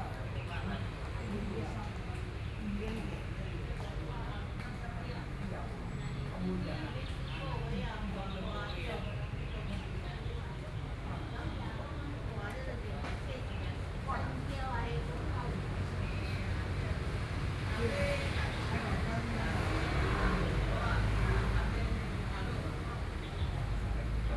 Morning in the park, Traffic Sound, Environmental sounds, Birdsong, A group of elderly people chatting
Binaural recordings
Taipei City, Taiwan, February 2014